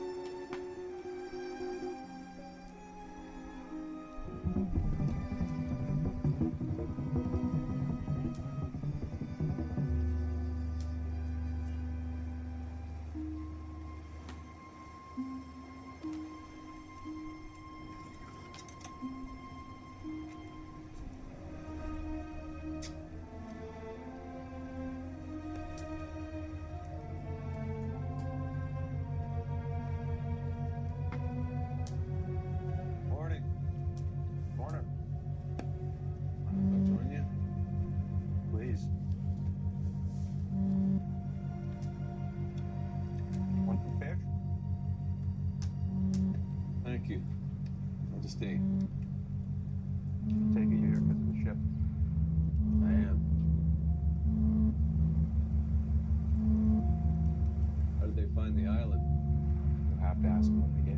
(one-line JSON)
{"title": "Camp Exodus - Balz is playing the tapes at the Camp Exodus", "date": "2009-08-02 17:24:00", "description": "camp exodus is a performative architecture, a temporary laboratory, an informative space station in the format of a garden plot.\norientated on the modular architectures and \"flying buildings\", the camp exodus compasses five stations in which information can be gathered, researched, reflected on and reproduced in an individual way. the camp archive thus serves as a source for utopian ideas, alternative living concepts, visions and dreams.\nBalz Isler (Tapemusician) was invited to experiment with Gordon Müllenbach (Writer).", "latitude": "52.51", "longitude": "13.40", "altitude": "44", "timezone": "Europe/Berlin"}